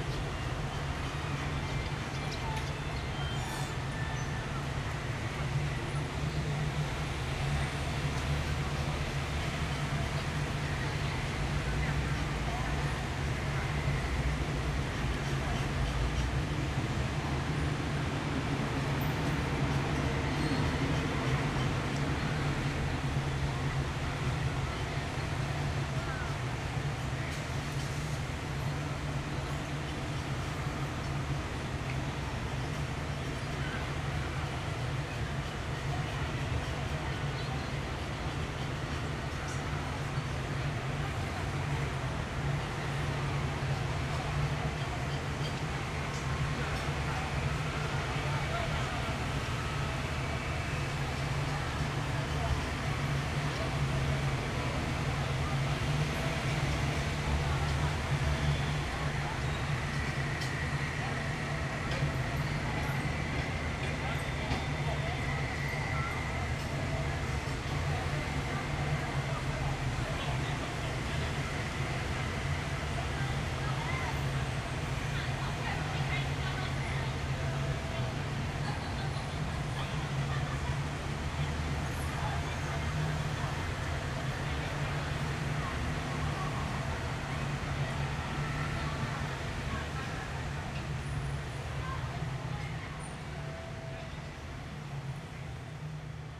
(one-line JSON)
{"title": "No., Fuhou Street, East District, Hsinchu City, Taiwan - Early Evening near the Moat Park", "date": "2019-08-13 18:01:00", "description": "From the three smokestacks sculpture at the moat park, a group of teenagers can just be heard talking as they wait for a bus. Waterfowl are also nearby. A man bangs pots, while cleaning them outside, at the yakiniku barbecue restaurant across the street. Stereo mics (Audiotalaia-Primo ECM 172), recorded via Olympus LS-10.", "latitude": "24.81", "longitude": "120.97", "altitude": "24", "timezone": "Asia/Taipei"}